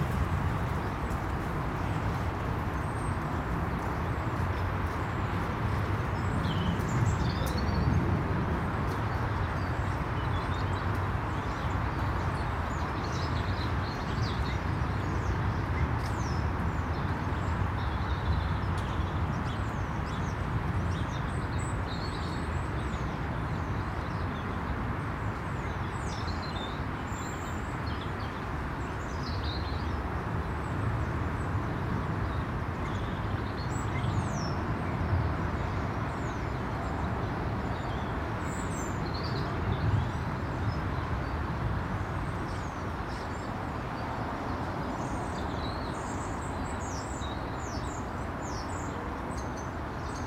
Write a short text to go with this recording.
The Drive Moor Crescent Moorside Little Moor Jesmond Dene Road, A westie, is scared of my hat, and has to be dragged past by her owner, At the end of the lane, a couple, unload pallets from the boot of their car, into the allotments, Treetop starlings call